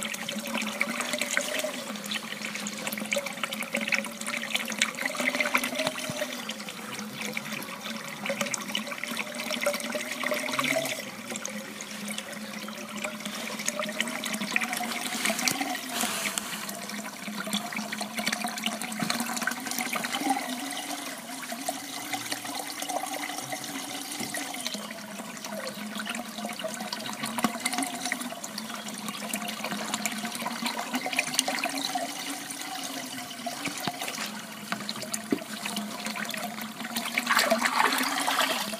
The sound of the well on St Stephans day 2021. Water flows from the basin and is channeled into a stream. In the past a chapel was built over the well which is a spring located below giants hill.
England, United Kingdom, December 2021